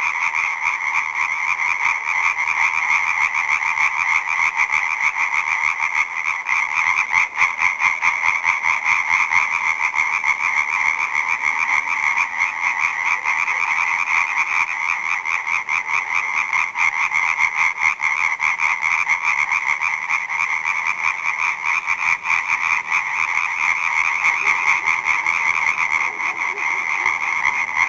Meline, frogs
april peak frogs
Primorsko-Goranska županija, Hrvatska